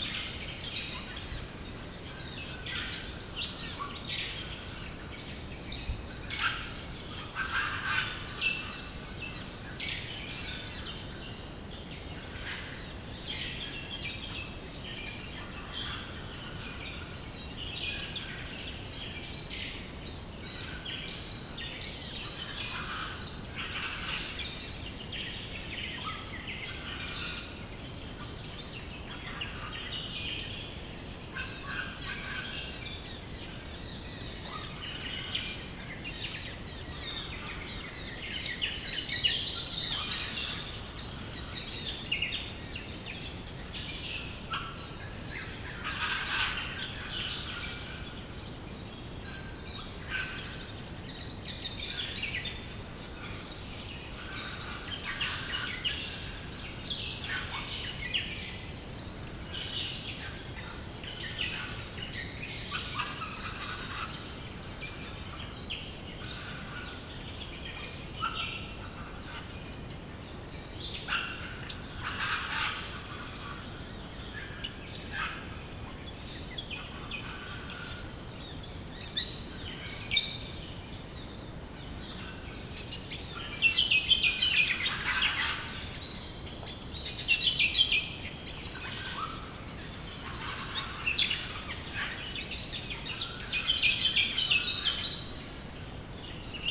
Moggs Creek Cct, Eastern View VIC, Australia - Morning birds at Moggs Creek
Otway forest alive with the sound of birds on a cold, sunny winter morning. Recorded with an Olympus LS-10.